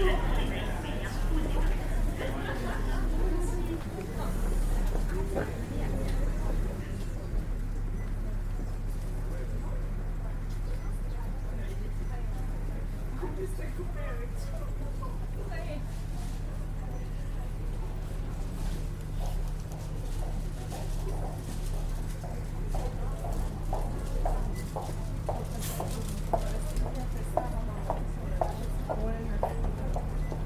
Montreal: 1000 de La Gauchetiere Terminus - 1000 de La Gauchetiere Terminus
equipment used: Ipod Nano with Belkin Interface
Waiting for the 55 bus in the indoor South Shore bus terminal, all lines delayed 20 minutes, 1000 De La Gauchetiere
Montreal, QC, Canada, 13 May